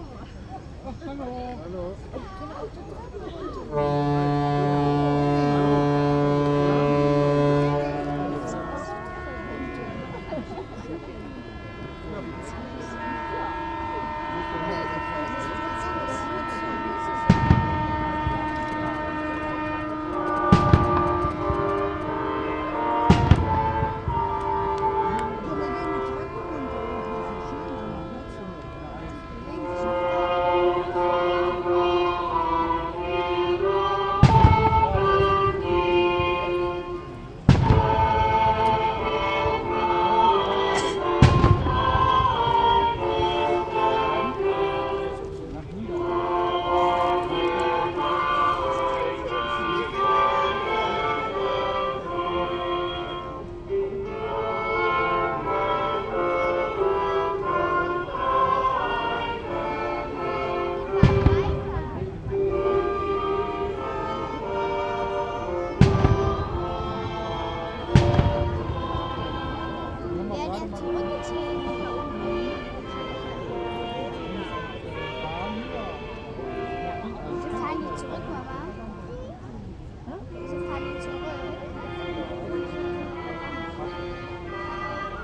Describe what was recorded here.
Fronleichnamsprozession der Rheinschiffe, Mülheimer Brücke, Köln, 22.Mai.2008, 11:30, Die Schiffe treiben stop & go stromabwärts, mit dem Heck voran, Bug gegen die Strömung. Gebete, Gesänge, Geschütze...